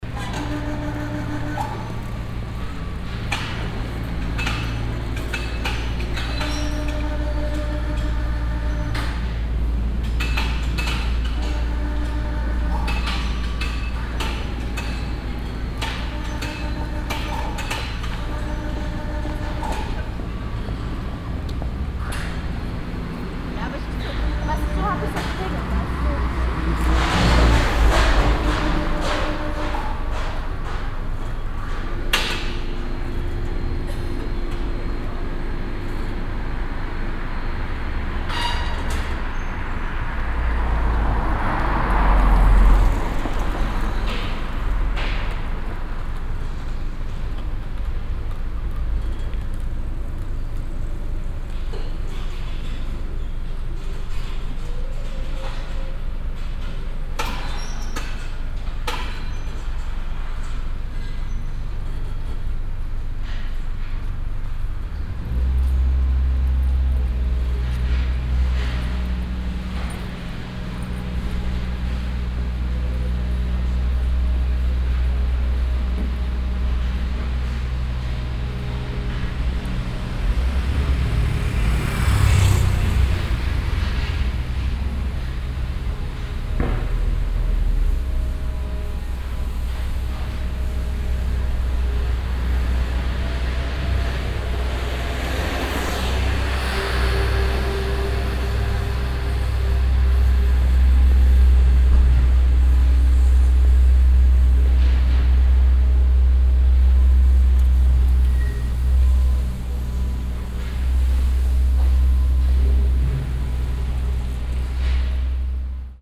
{"title": "cologne, maybachstrasse, hausbau", "date": "2008-09-19 19:01:00", "description": "ferngesteuerter kran in hauslücke beim transport, strassenverkehr\nsoundmap nrw:\nprojekt :resonanzen - social ambiences/ listen to the people - in & outdoor nearfield recordings", "latitude": "50.95", "longitude": "6.95", "altitude": "53", "timezone": "Europe/Berlin"}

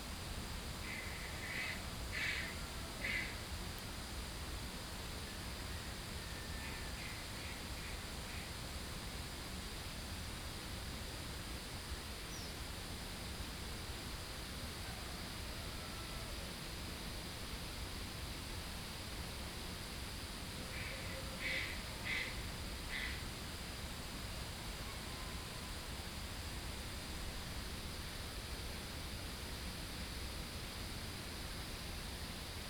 {"title": "桃米巷, 埔里鎮桃米里, Taiwan - In the morning", "date": "2015-08-13 05:17:00", "description": "In the morning, Birds call, Chicken sounds, The sound of water streams", "latitude": "23.94", "longitude": "120.94", "altitude": "470", "timezone": "Asia/Taipei"}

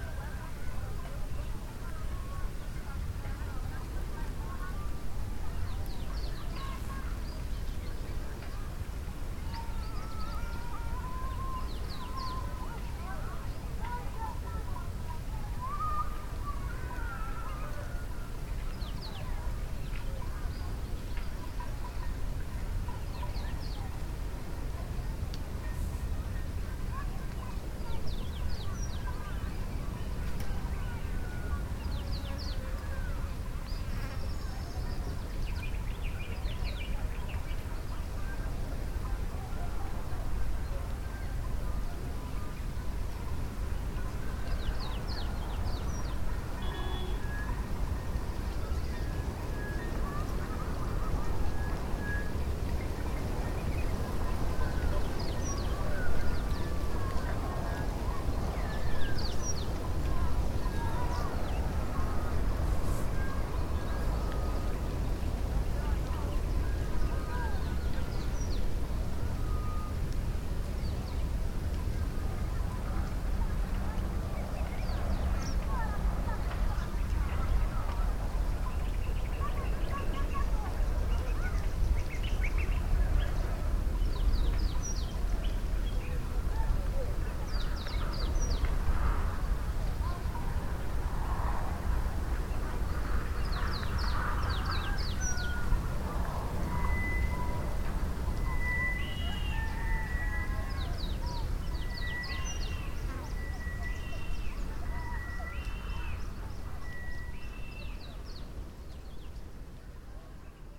{"title": "Harbour, Binga, Zimbabwe - sounds in Binga harbour...", "date": "2016-10-11 16:36:00", "description": "...walking back up the way from the harbour, pausing a moment to listen to the many voices in the air… from the birds, the fishing camps a bit further up, the boats down at the lake...", "latitude": "-17.60", "longitude": "27.35", "altitude": "512", "timezone": "GMT+1"}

